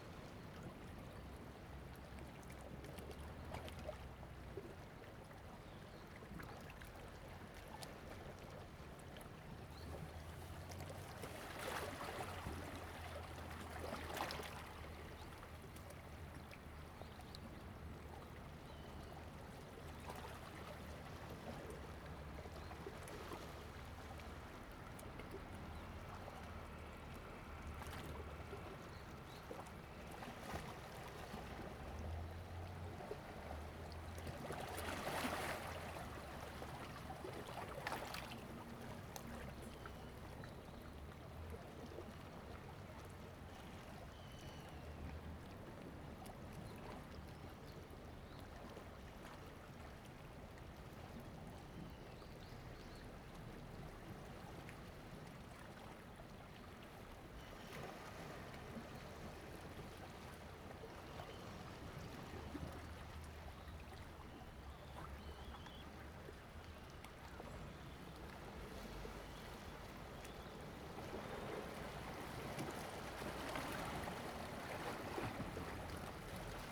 Small port, Sound tide
Zoom H2n MS +XY
Taitung County, Lanyu Township, 28 October 2014